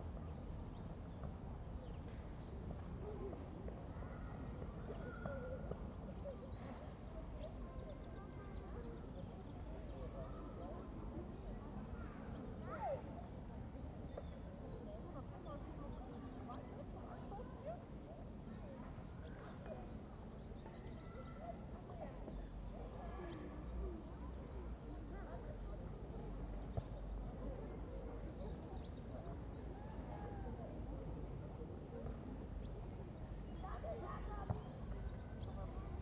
2 days after the Covid-19 restriction have banned meetings of more than 2 people, except families living together. Gone are the large groups of teenagers and 20 somethings socializing. Now it's single people huddled against the wind or mum/dad kicking a football with a young son (no daughters to be seen). But maybe this is partly because it's so cold. Birds are singing less than a few days ago. And during recording no planes flew overhead (the park is directly below the Tegel flight path and normally one passes every 3 or 4 minutes).